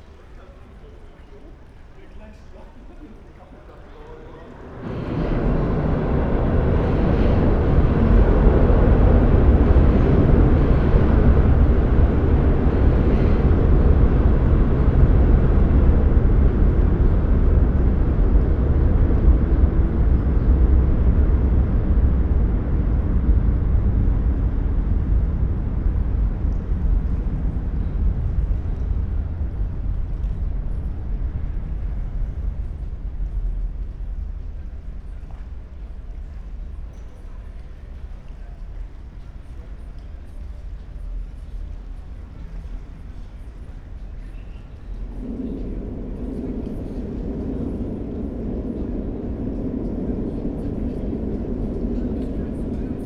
Hohenzollernbrücke, Köln Deutz - bridge underpass, trains passing
Köln Deutz, under Hohenzollerbrücke, train bridge, drone of various passing-by trains
(Sony PCM D50, Primo EM172)
Köln, Germany, 16 August, ~21:00